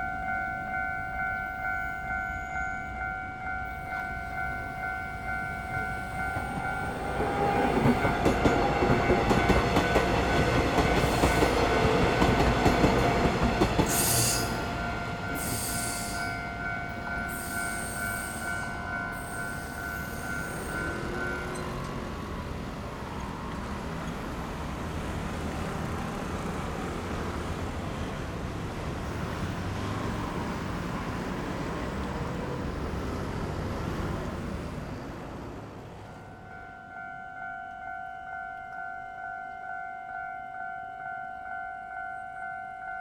中興路三段, 五結鄉四結村 - Close to the track
At railroad crossing, Close to the track, Traffic Sound, Trains traveling through
Zoom H6 MS+ Rode NT4